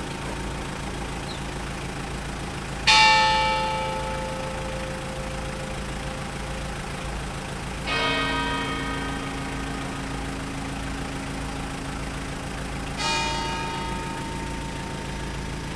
Ambert, Saint-Jean Place, Knell
France, Auvergne, Funeral, Knell, Bells